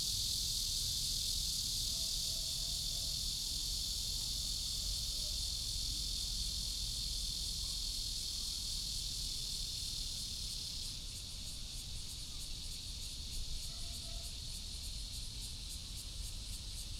Cicadas, sound of birds, Traffic sound, The plane flew through, Phone message sound